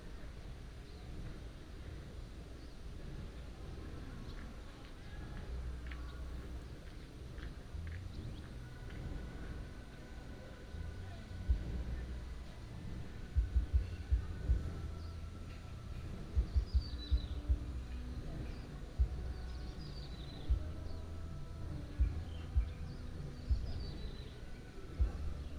Traffic sound, Bird cry, Karaoke, In front of the hot spring hotel
Taitung County, Taimali Township, 金崙林道, 1 April 2018